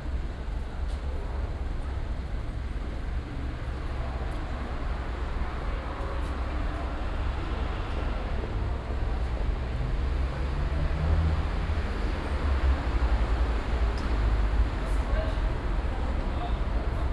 unter bahnbrücke - verkehr, bahnüberfahrten, menschen
project: social ambiences/ listen to the people - in & outdoor nearfield recording
hansaring, unter bahnbrücke